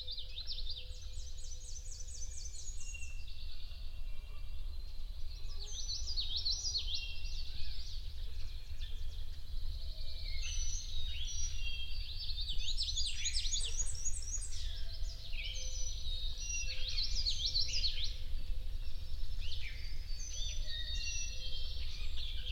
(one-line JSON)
{"title": "Washington, NJ, USA - penn swamp dawn", "date": "2007-04-15 05:00:00", "description": "I've spent many an hour beside this swamp recording dawn choruses; this is located deep in the heart of the pine barrens. A barred owl hoots and calls (\"who cooks for you>?) in this five minute excerpt of an hour-long recording.", "latitude": "39.69", "longitude": "-74.63", "altitude": "16", "timezone": "GMT+1"}